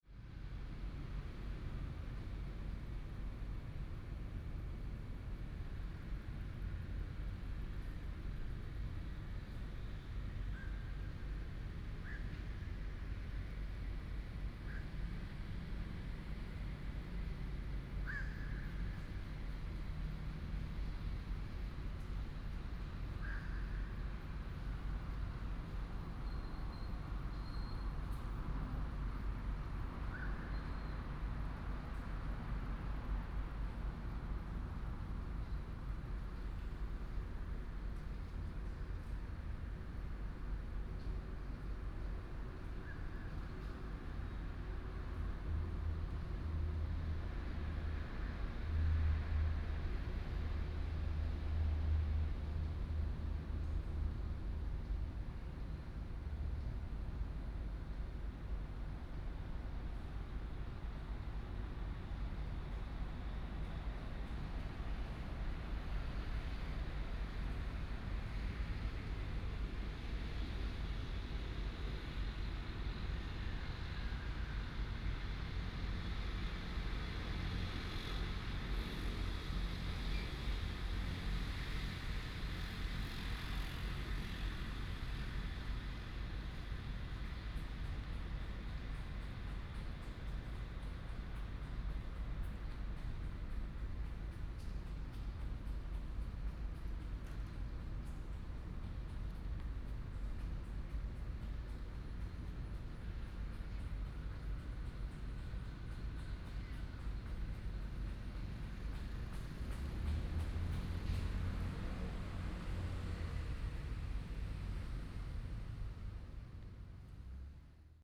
中央公園, Hsinchu City - Early in the park
early morning, in the park, traffic sound, Birds, Binaural recordings, Sony PCM D100+ Soundman OKM II